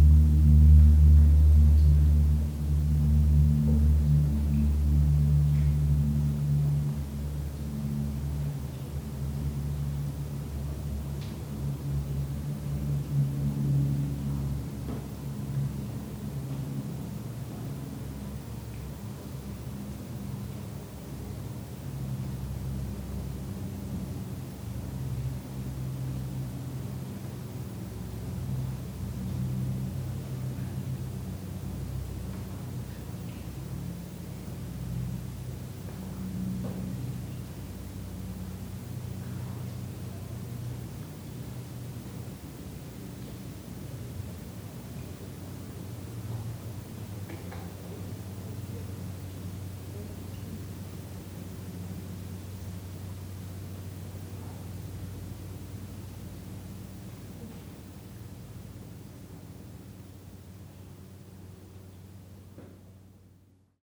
wülfrath, hammerstein, im zeittunnel
frühjahr 07 morgens - windresonanzen und schritte im "zeittunel" - hier ohne exponate
Spring 2007 in the early morning. Resonances affected by the wind and silent steps in the empty "zeittunnel" exhibition tunnel.
project: :resonanzen - neandereland soundmap nrw - sound in public spaces - in & outdoor nearfield recordings
24 June